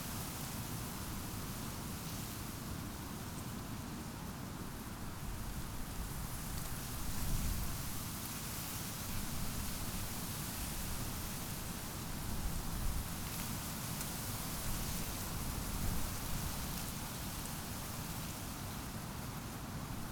Buch, Berlin, Moorlinse - wind in reed
Moorlinse Buch, near the S-Bahn station in the northeast of the city, is an extremely valuable refuge for amphibians, reptiles and birds. Marsh harriers and red-breasted grebes breed here, the white-tailed eagle can be seen circling in search of food and the wetland is also popular with migratory birds; on some autumn days hundreds of wild geese gather there.
(Sony PCM D50)
9 March 2019, 15:00